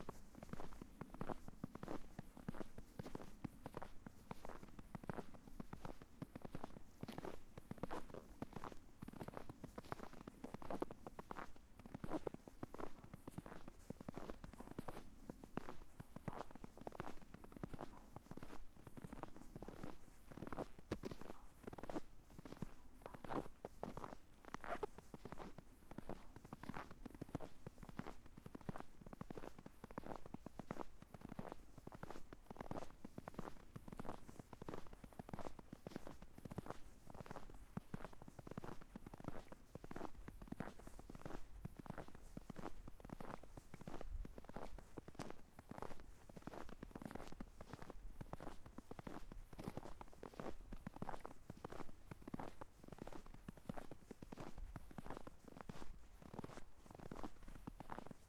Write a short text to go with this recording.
snow walk, the city, the country & me: january 6, 2016